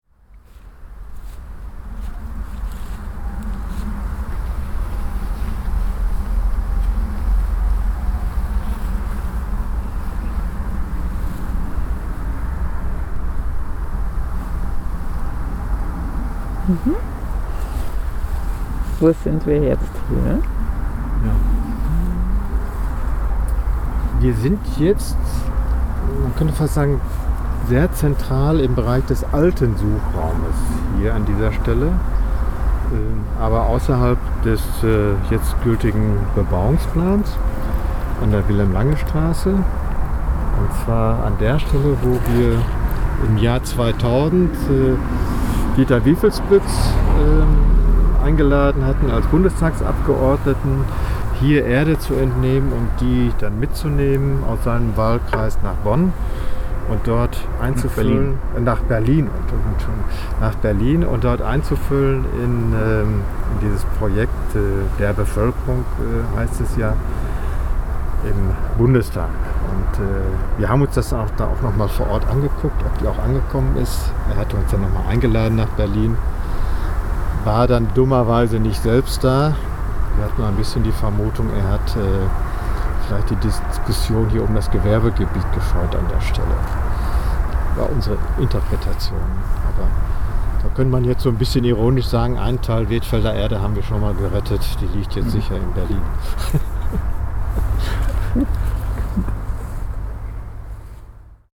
Weetfeld, Hamm, Germany - Weetfeld earth in Berlin...
We are visiting here an environmental memorial so to say. The drone of the motorway hangs in the air. On invitation of the Weetfeld Citizens Organisation in 2000, Dieter Wiefelspitz, a Member of Parliament had taken a sample of Weetfeld earth here and took it with him to Berlin. An art project in the Parliament there gathers earth and local stories from all over the country. “This way, we could saved at least one small part of Weetfeld earth …”, Rudi and Stefan say ironically.
An einem Mahnmahl des Landschaftsschutzes…
“Citizen Association Against the Destruction of the Weetfeld Environment”
(Bürgergemeinschaft gegen die Zerstörung der Weetfelder Landschaft)